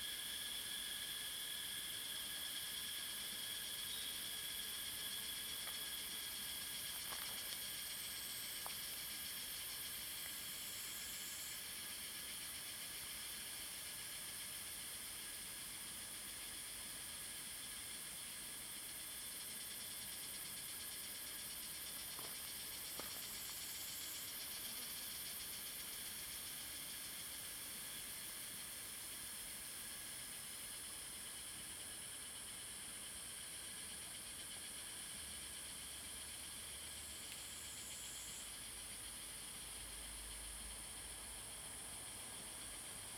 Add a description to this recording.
In the woods, Cicada sounds, Zoom H2n MS+XY